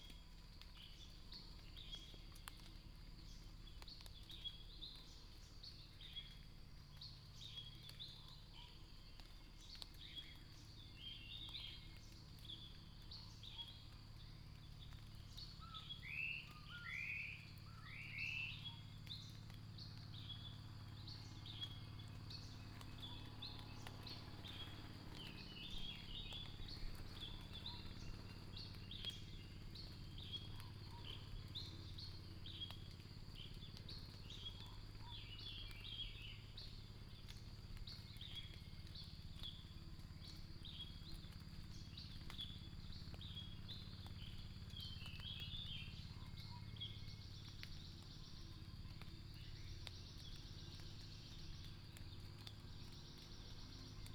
桃米里, Puli Township, Nantou County - the morning
Traffic Sound, Chicken sounds, Bird sounds, Water droplets fall foliage
Nantou County, Puli Township, 水上巷, 21 April 2016, 05:27